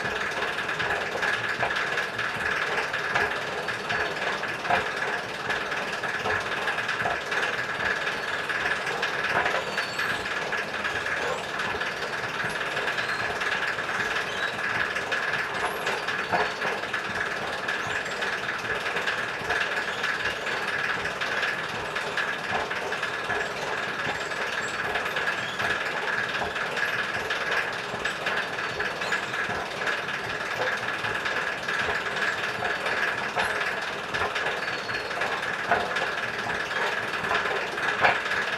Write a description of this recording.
Recording of squeaking and gabbling moving staircase. Recorded with Olympus LS-P4